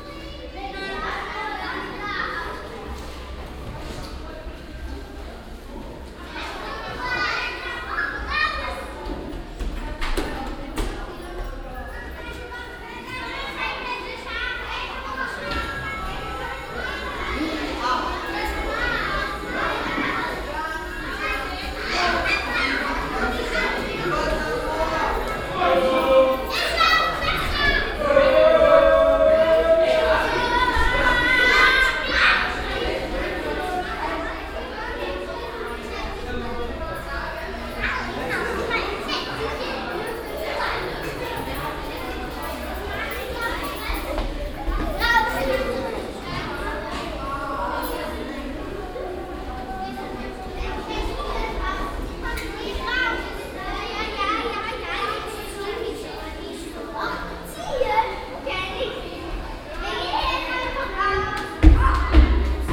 refrath, mohnweg, waldorf schule, vor schulbeginn
soundmap: refrath/ nrw
schuleingang, morgens, schritte, stimmen, em sirenen, ball hüpfer, schlüsseklingeln, die schulklingel
project: social ambiences/ listen to the people - in & outdoor nearfield recordings